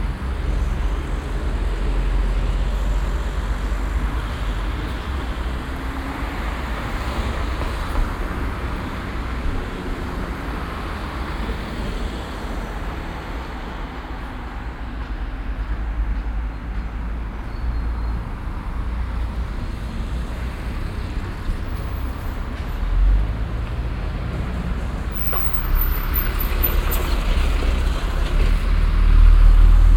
refrath, in der auen, strassenschwellen, verkehr
morgendlicher strassenverkehr an ampel über 2 strassenschwellen
soundmap nrw - social ambiences - sound in public spaces - in & outdoor nearfield recordings